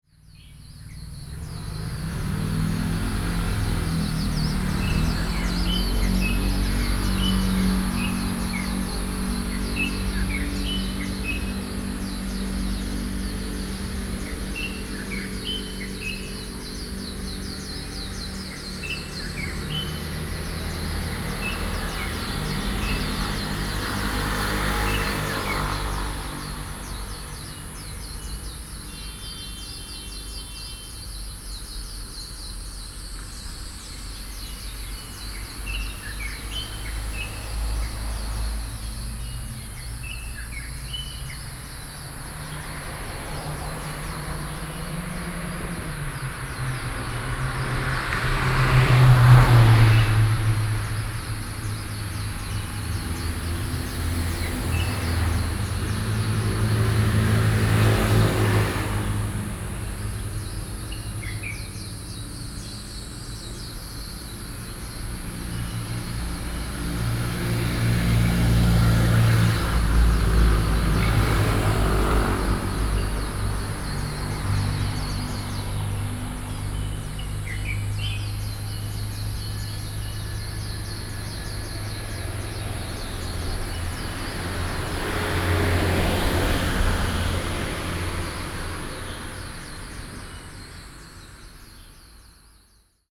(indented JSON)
{
  "title": "Shuangxi Park, Taipei - Early in the morning",
  "date": "2012-06-23 05:00:00",
  "description": "Shuangxi Park and Chinese Garden, Sony PCM D50 + Soundman OKM II",
  "latitude": "25.10",
  "longitude": "121.53",
  "altitude": "18",
  "timezone": "Asia/Taipei"
}